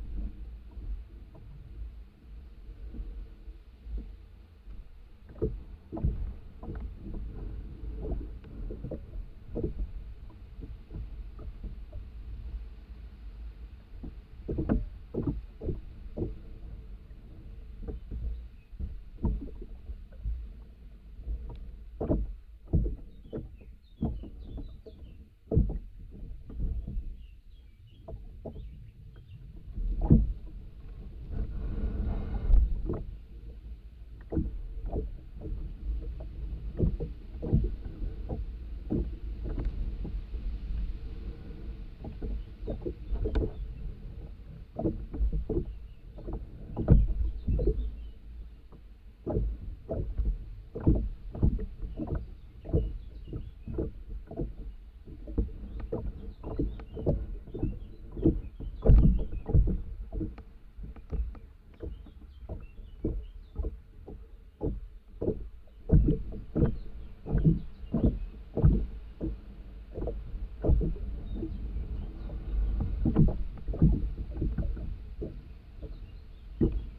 Šlavantai, Lithuania - A boat swaying in the water
Dual contact microphone recording of a wooden boat being gently swayed by the water. Some environment sounds - wind, birds chirping - also come through a bit in the recording, resonating through the boat surface.